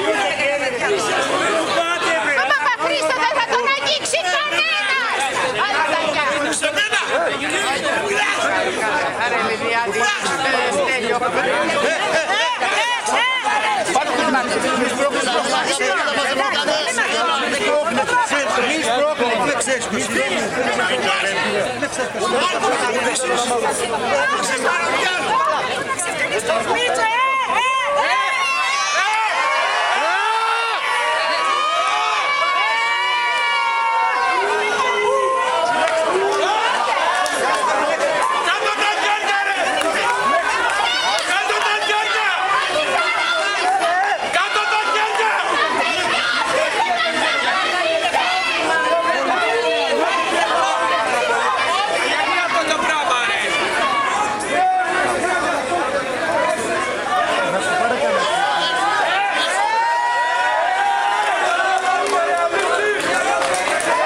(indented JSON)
{
  "title": "Athens, Exarchia - Police arresting writer Dimitris Papachristou - 21.10.2009",
  "date": "2009-10-21 22:00:00",
  "description": "Police arresting the writer Dimitris Papachristou in front of Cafe Floral at Exarchia Square.",
  "latitude": "37.99",
  "longitude": "23.74",
  "altitude": "94",
  "timezone": "Europe/Athens"
}